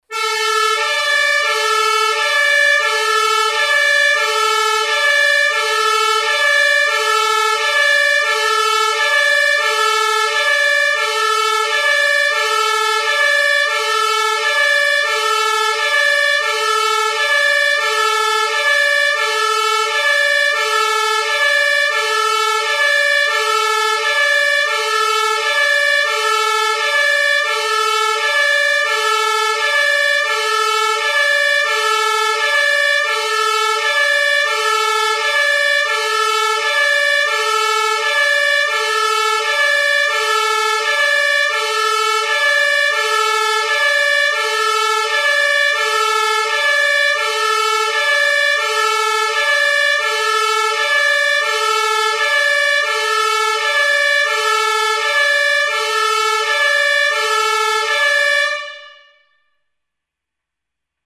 Finally the well known sound of a fire truck siren going.
Hosingen, Einsatzzentrum, Feuerwehrsirene
Schließlich das allseits bekannte Geräusch des Martinshorns.
Hosingen, centre d'intervention, sirène d'un camion de pompiers
Et enfin, le bruit bien connu de la sirène d’un camion de pompiers qui part.